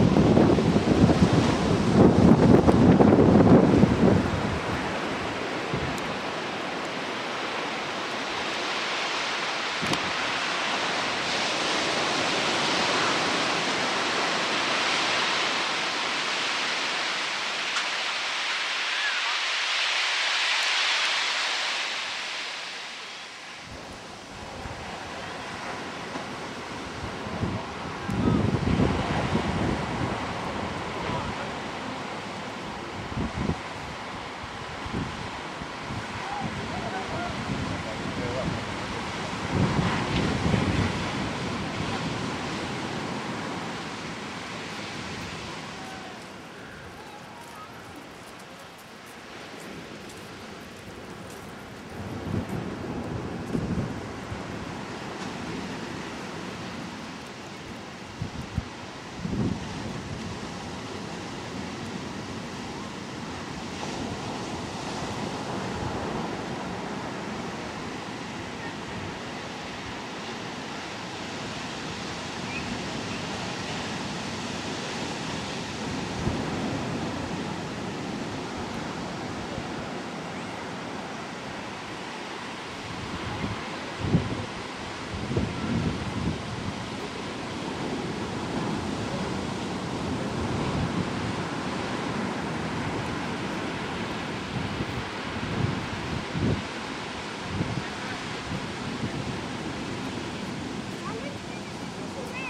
Bakio (basque country)
is the first Sunday in November and its a sunny day. People and puppies surfing and walking along the beach.
Biscay, Spain